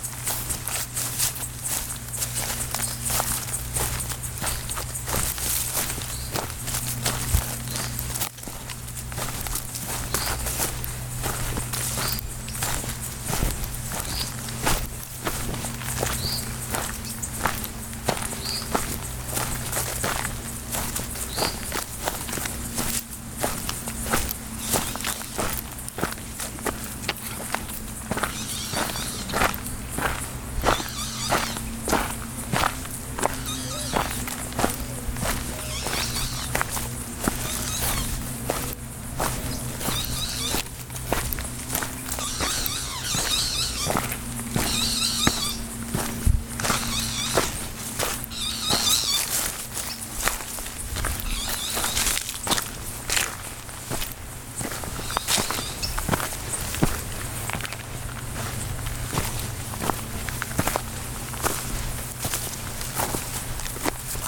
Walking on the grove herb field, São Sebastião da Grama - SP, Brasil - Walking on the grove herb field
Paisagem Sonora:
This soundscape archive is supported by Projeto Café Gato-Mourisco – an eco-activism project host by Associação Embaúba and sponsors by our coffee brand that’s goals offer free biodiversity audiovisual content.
Recorded with a Canon DlSR 5d mark II
We apreciare a lot your visit here. Have fun! Regards